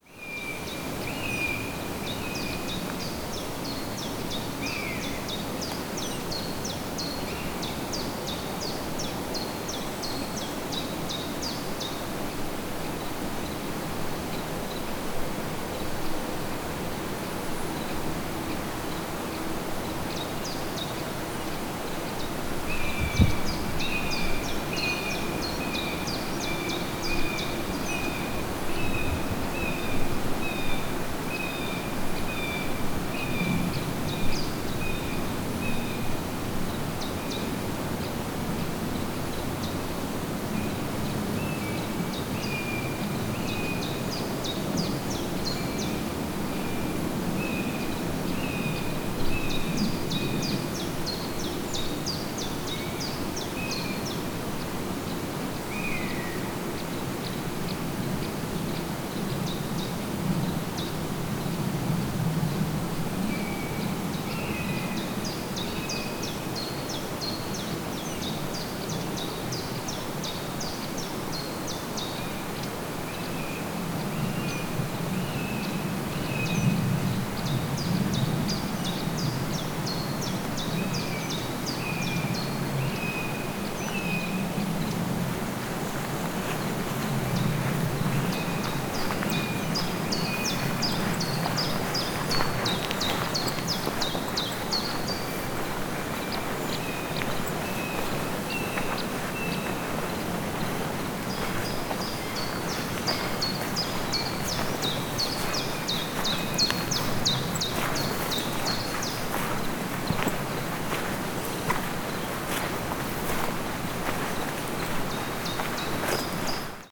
Steinbachtal, entering the dell, WLD
entering the dell of the brook Steinbach, WLD, world listening day
Germany